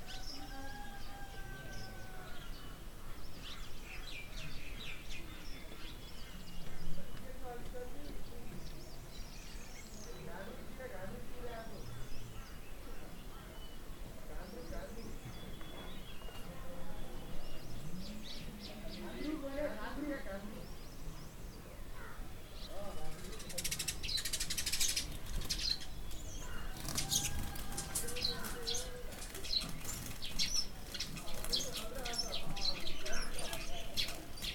Ghandruk, Nepal - Morning
Birds and people getting ready in the morning in a small mountain village. Recording with Zoom H5.
4 April 2019